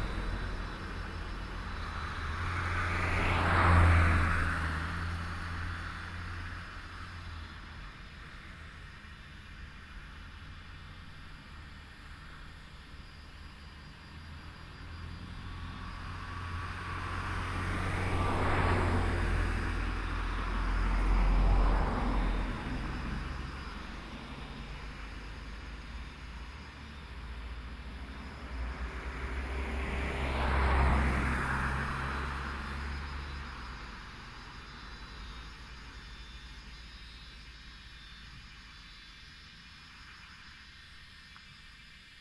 水璉村, Shoufeng Township - Cicadas sound
Cicadas sound, Traffic Sound, Birdsong, Very hot days
Shoufeng Township, 花東海岸公路, 28 August 2014